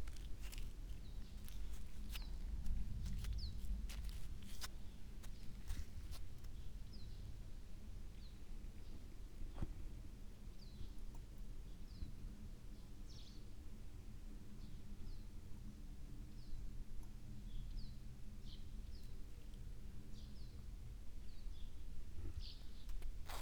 quarry, Marušići, Croatia - void voices - stony chambers of exploitation - white sand, steps
quiet ambience, very hot summer afternoon, walking over white sand